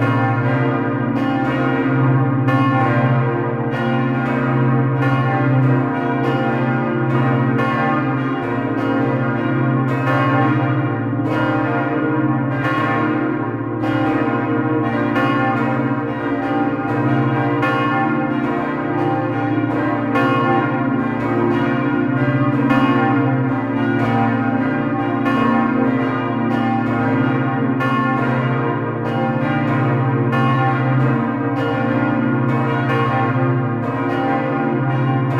Bruxelles, Belgique - Cathedral bells ringing
On the national day fest, ringing of all the small bells. The big bell Salvator, located on the north tower, doesn't ring at this moment. Recorded inside the tower. Thanks to Thibaut Boudart for precious help to record these bells.
~~~Before the bells ringing, there's the automatic hour chime.
Place Sainte-Gudule, Bruxelles, Belgium, 21 July 2013